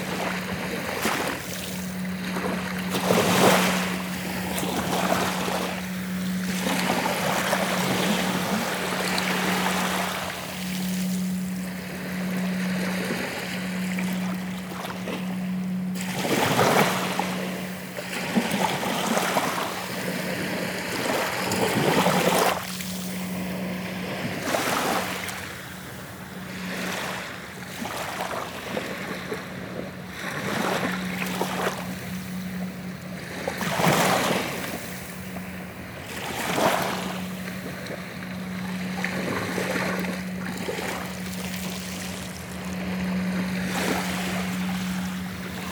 Smooth sound of the sea on the Zwartepolder beach.